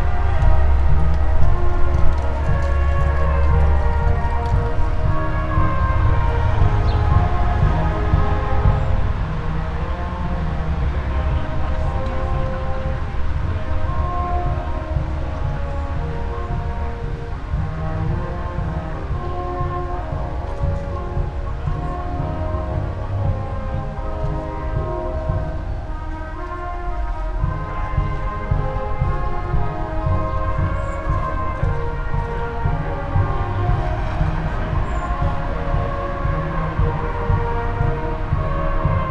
all the mornings of the ... - aug 11 2013 sunday 11:11
Maribor, Slovenia, 11 August